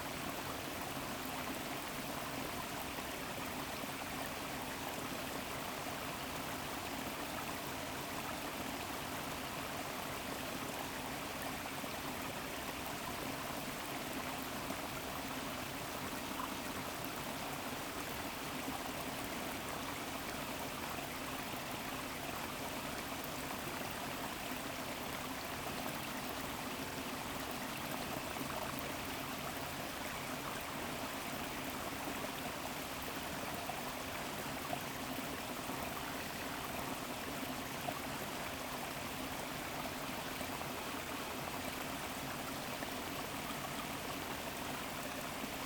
Valea Morilor Park, Chișinău, Moldova - The streamflow from the valley of the mills.
The recording of one of the streamflow from the "Valley of the mills" park.
Recorded with a Zoom H6 (SSH-6 mic)